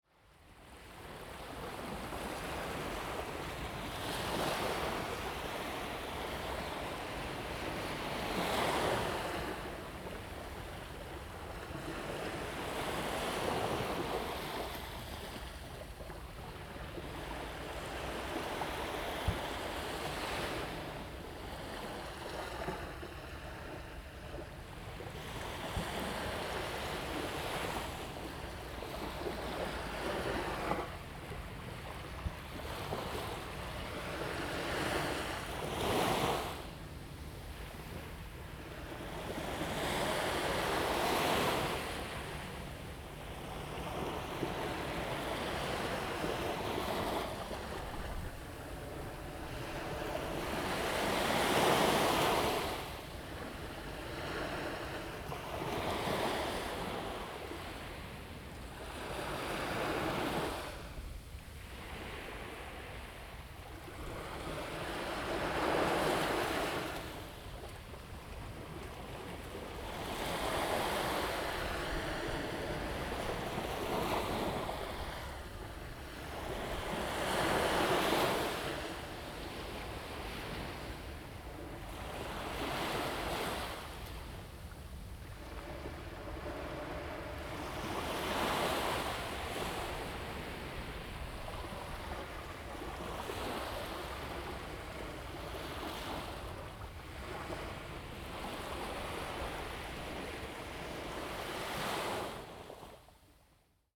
雙口, Lieyu Township - Sound of the waves
Sound of the waves
Zoom H2n MS+XY
4 November 2014, ~10:00